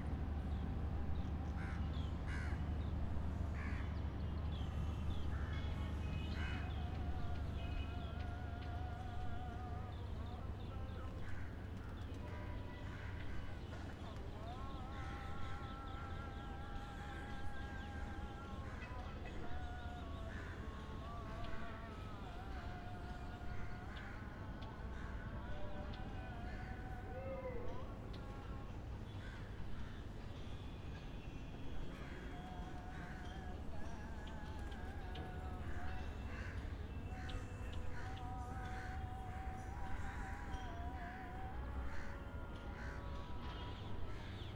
Khirki, New Delhi, Delhi, India - General ambience around the old mosque 1
General city ambiance recorded from the flat roof of the very interesting old mosque in Delhi.